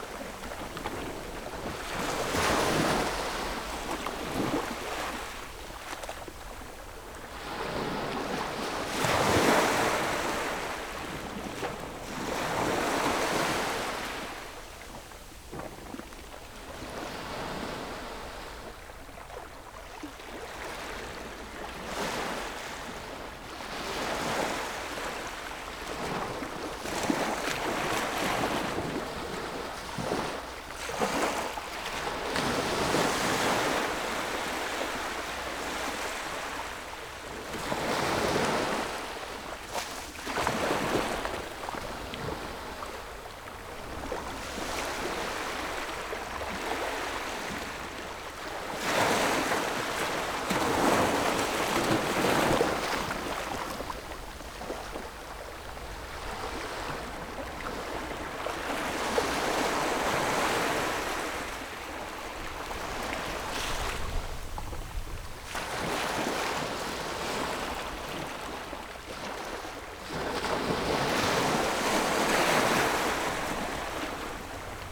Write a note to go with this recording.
At the beach, Sound of the waves, Zoom H6 +Rode NT4